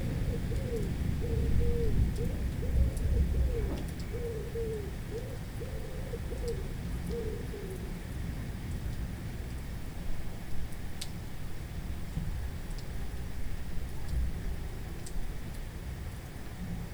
Colchester, Essex, UK - Thunderstorm: 7.am 18th July 2014
Zoom H4n, Storm + Rain, early.